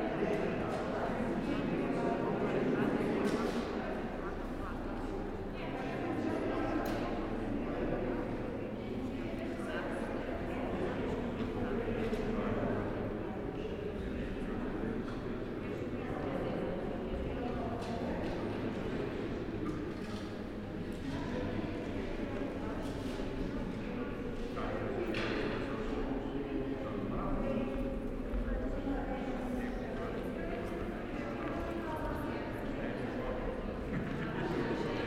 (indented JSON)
{
  "title": "Utena, Lithuania, vaccination centre",
  "date": "2021-05-05 09:05:00",
  "description": "just got Pfizer vaccine. and sitting required 10 minutes after the injection, I push \"rec\" on my recorder. ambience of local vaccination centre. large sport hall.",
  "latitude": "55.50",
  "longitude": "25.60",
  "altitude": "111",
  "timezone": "Europe/Vilnius"
}